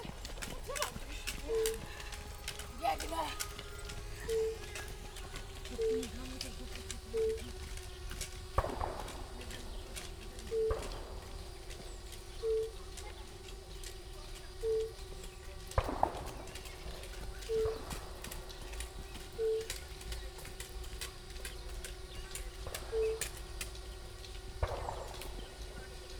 sitting at the edge of a shallow pond, listening to the fire-bellied toads calling, distant announcements of a short marathon, a bit later the runners passing by, a drone appears, shots all the time
(Sony PCM D50, DPA4060)